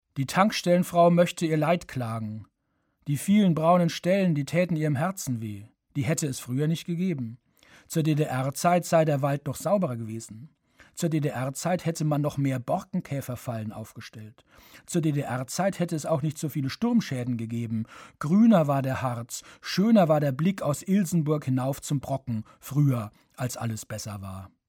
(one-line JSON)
{"title": "ilsenburg - an der tanke", "date": "2009-08-08 22:19:00", "description": "Produktion: Deutschlandradio Kultur/Norddeutscher Rundfunk 2009", "latitude": "51.87", "longitude": "10.69", "altitude": "225", "timezone": "Europe/Berlin"}